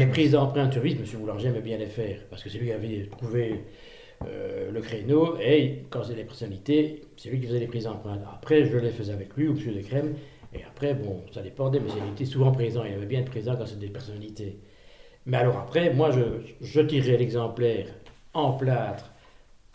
Genappe, Belgique - The moulder
Testimony of bernard Legrand, a moulder, or perhaps consider him as a sculptor, who made an excellent work in a nitriding factory.